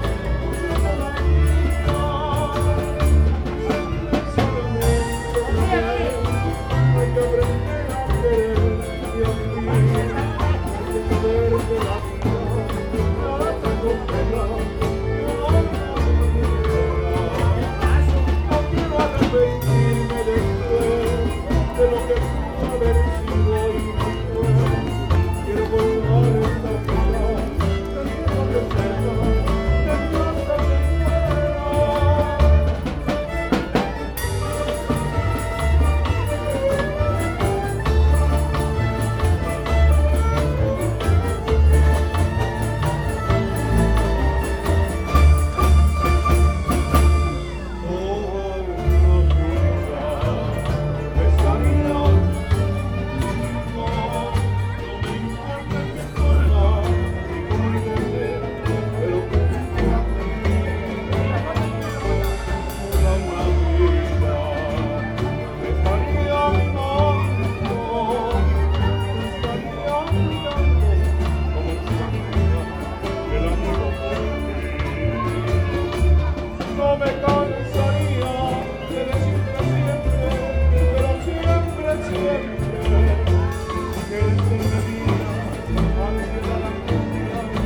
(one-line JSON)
{"title": "Plaza Principal S/N, Centro, León, Gto., Mexico - Banda tocando junto al quiosco de la zona peatonal. Centro, León, Guanajuato. México.", "date": "2019-04-26 18:53:00", "description": "Banda Municipal de León, Guanajuato playing some songs next to the kiosk in the city center.\nPeople sitting nearby listening to the music while eating some ice cream or chips.\nI made this recording on April 24, 2019, at 6:53 p.m.\nI used a Tascam DR-05X with its built-in microphones and a Tascam WS-11 windshield.\nOriginal Recording:\nType: Stereo\nBanda Municipal de León, Guanajuato tocando algunas canciones junto al quiosco del centro de la ciudad.\nGente sentada en los alrededores escuchando la música mientras comían algún helado o papitas fritas.\nEsta grabación la hice el 24 de abril 2019 a las 18:53 horas.", "latitude": "21.12", "longitude": "-101.68", "altitude": "1808", "timezone": "America/Mexico_City"}